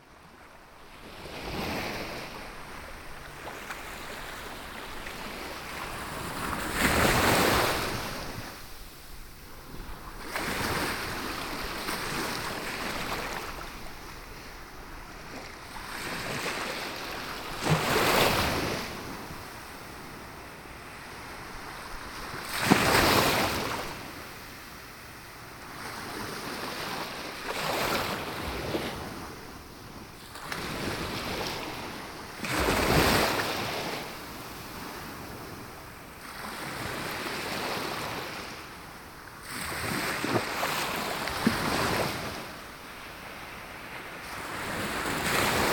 San Juan Playa, Alicante, Spain - (04 BI) San Juan Beach
Binaural recording of waves at San Juan Beach.
Recorded with Soundman OKM on Zoom H2n
Alacant / Alicante, Comunitat Valenciana, España